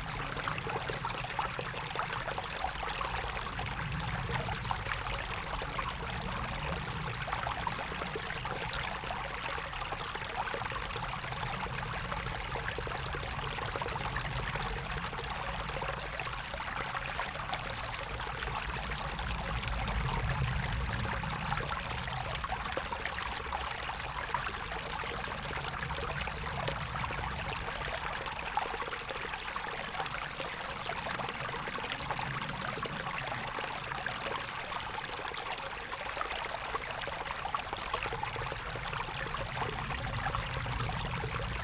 the city, the country & me: may 7, 2011